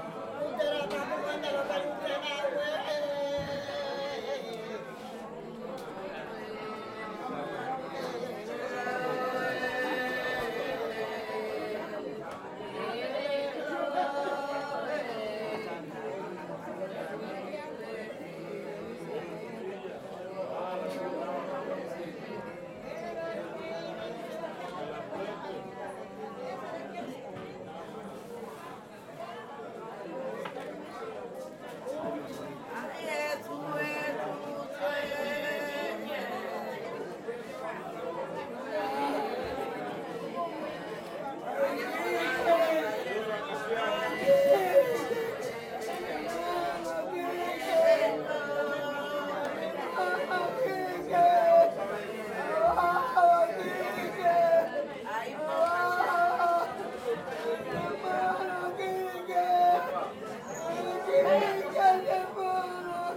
Last night of the funeral ritual celebrated in San Basilio de Palenque.
Zoom H2n inner microphones
XY mode, head's level